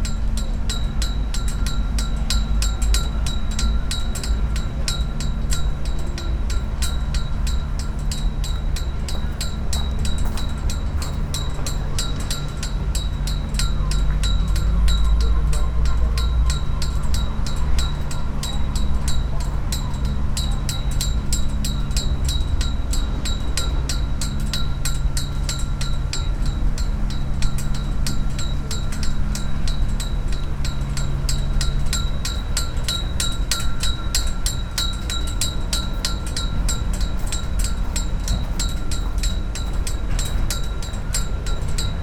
Riva, Pula, Chorwacja - flag poles
steel ropes drumming on flag poles in the wind (roland r-07)
Grad Pula, Istarska županija, Hrvatska, 19 September 2021, ~12:00